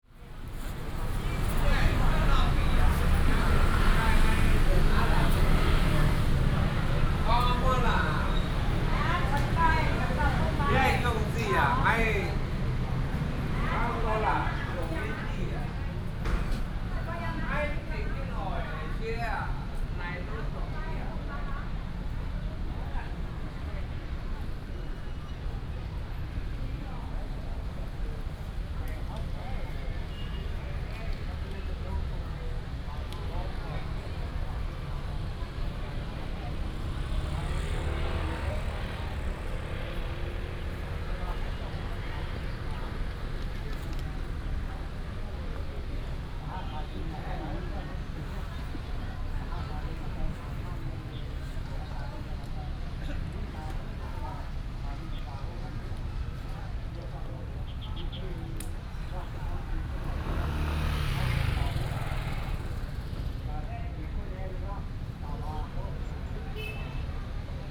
Walking in the park, Many old people, Use a variety of different languages in conversation
Hsinchu County, Taiwan, 17 January 2017